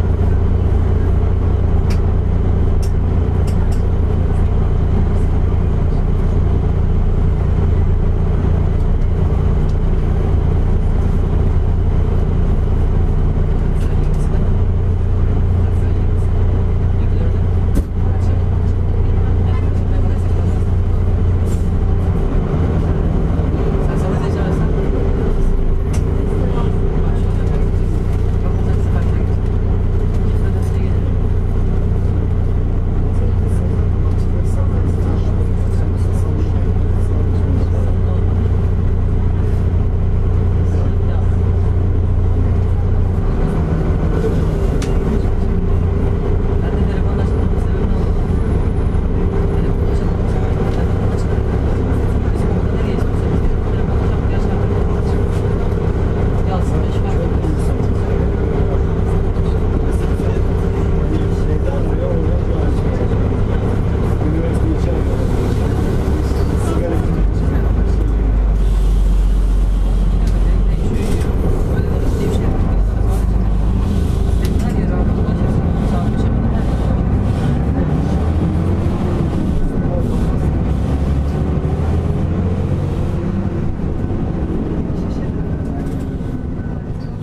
If Istanbul is an organism, the streets of Istanbul are the veins of the city. Its blood pressure is very low though. The vehicles are slow and bulky, the roads are often narrow and clogged. The bus, a major corpuscle, is waiting to get entirely packed with passengers, so that all capacities are fully in use. You will find a very complex and dense cell structure in the interior of the vehicle. Once you cannot move anymore, the bus may go on its way.